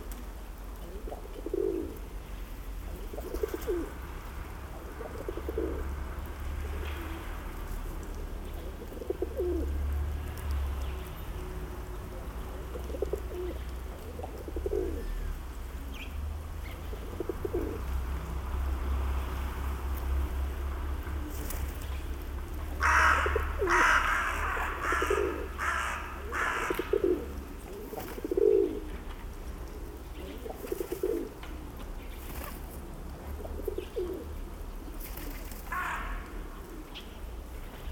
Birds in the city
recorded on zoom h4n + roland cs-10em (binaural recording)
птицы в одном из дворов города Северодвинска